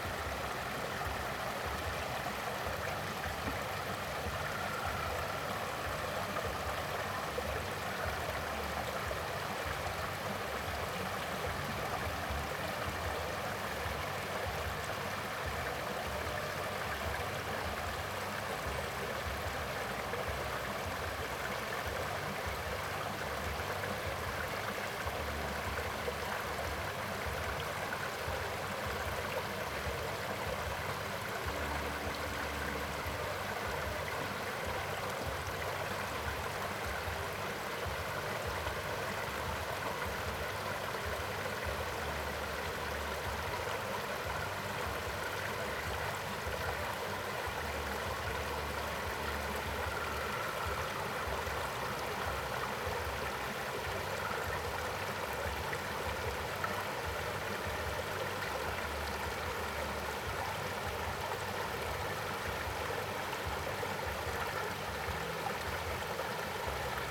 Next to the stream, Small tribe, The frogs chirp, Dog barking
Zoom H2n MS+XY +Sptial Audio